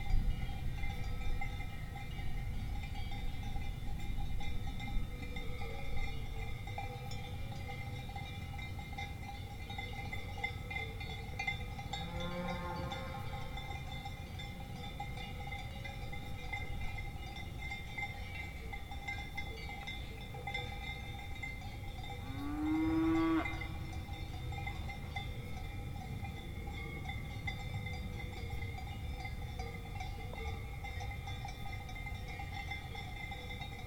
Recorded with Zoom H2.
An "alpage pasture" landscape where echoes cowbells.
Here is an association of anthrophony and biophony : cowbells are musical instruments designed by humans to identify herds and/or their owners (each owner has his specific sound signature), but cowbells only resonate with the movement of the animal.
In the middle of the recording, a plane can be heard over cowbells : loud anthrophony above these mountains.
Some mooings can be heard too (biophony).